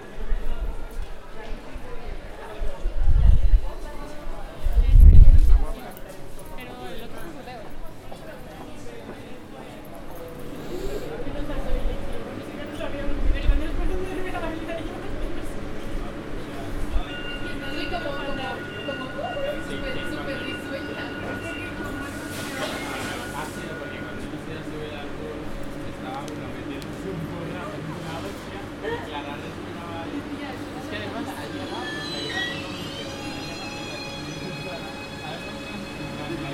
Cantoblanco Universidad, Madrid, España - Train station
It was recorded at the train station that is inside the university campus.
In this audio you can hear the voices of people talking and their steps. You can also hear the arrival of the train, the beep that indicates that the doors will be closed and finally the departure of the train.
Recorded with a Zoom H4n.
Madrid, Spain, 6 December, ~20:00